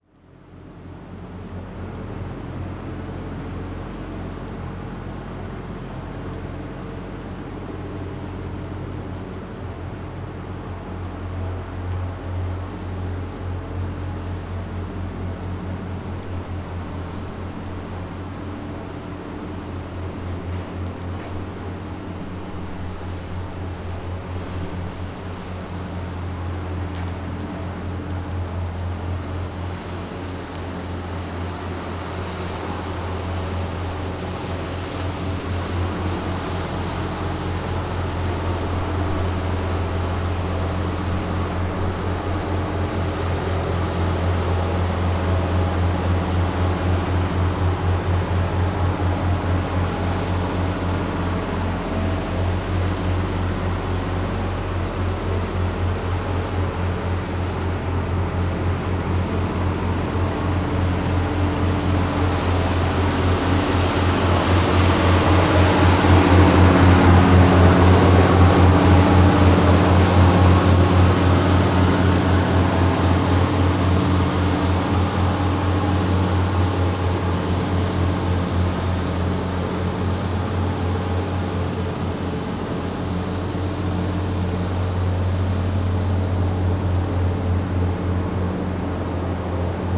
Maribor, Trg Leona Stuklja - cleaning machine
a loud and deep humming cleaning machine at work on the Leona Stuklja square.
(PCM D-50 internal mics)
Maribor, Slovenia, July 2012